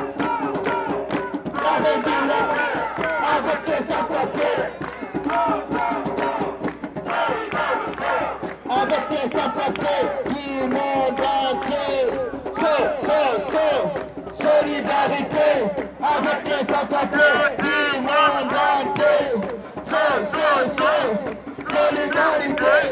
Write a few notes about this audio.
A lot of collectives for rights for sans papiers protest against the violence of Belgian migration policies, joining the Transnational Migrants’ Struggle "to make this May 1st a day for the freedom, the power and dignity of migrants. A day of strike against the institutional racism that supports exploitation and reproduces patriarchal violence."